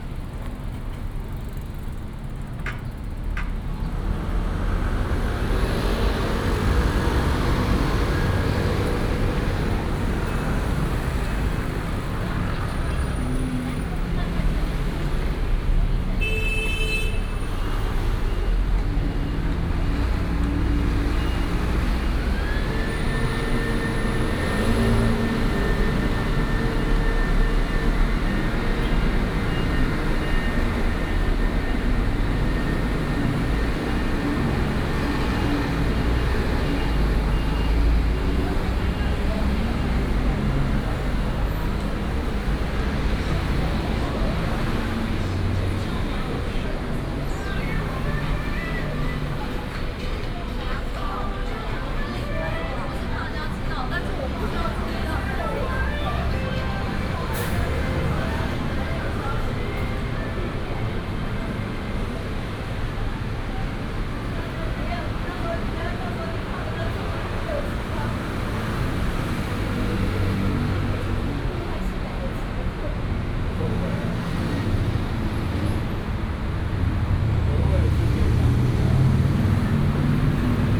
Hot weather, Starting from the alley toward the main road, Traffic noise